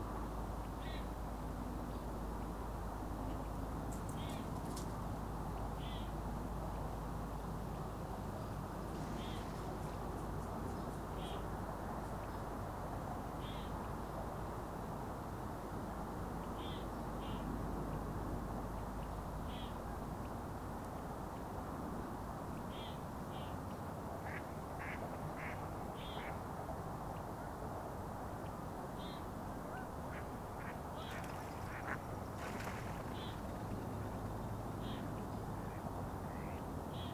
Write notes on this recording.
equipment used: Sound Devices Recorder, Colvert sur la rivière des Mille-îles à l'heure de pointe près de l'autoroute 15